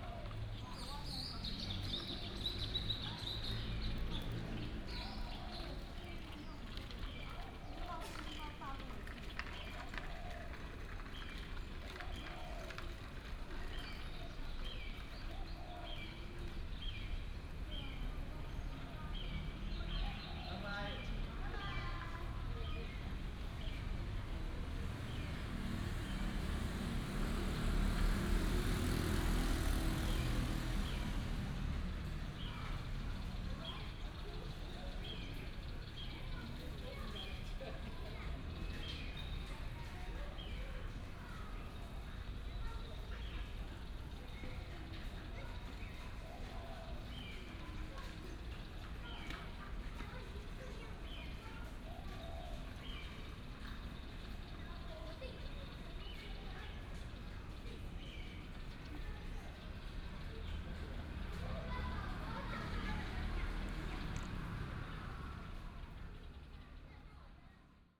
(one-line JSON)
{"title": "Chongqing Park, Banqiao Dist. - in the Park", "date": "2017-04-30 17:18:00", "description": "in the Park, sound of the birds, traffic sound, Child", "latitude": "25.00", "longitude": "121.47", "altitude": "19", "timezone": "Asia/Taipei"}